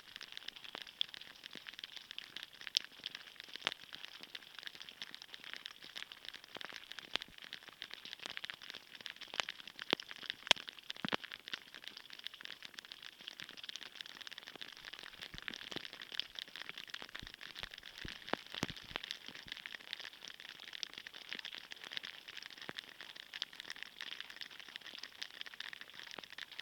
Bogart Hall, Ithaca, NY, USA - Ice melt (hydrophone mix)
Drips of water from icicles on the roof of Bogart Hall, snow crashes occasionally
Recorded with a hydrophone